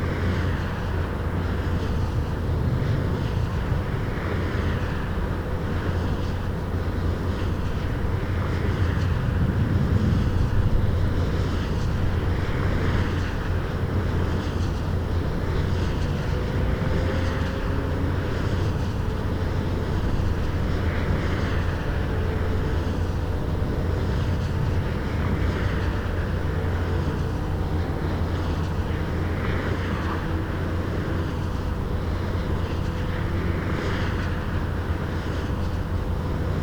remscheid, dörpmühle: windrad - the city, the country & me: wind turbine
the city, the country & me: may 8, 2011
Remscheid, Germany, 8 May